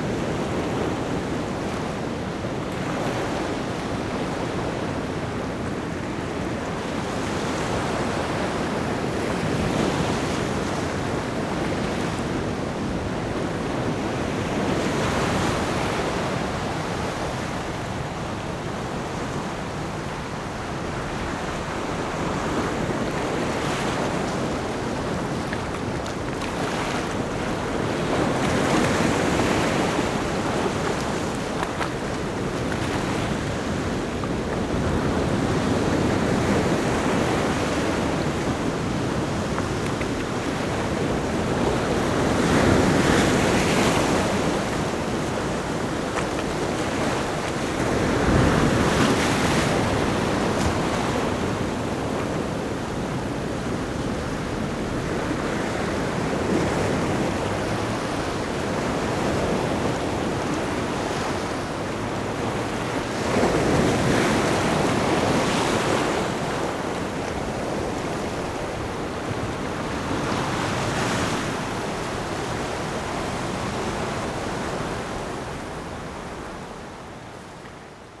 石門區富基里, New Taipei City - the waves
Big waves, sound of the waves
Zoom H4n+Rode NT4(soundmap 20120711-13 )
11 July 2012, ~06:00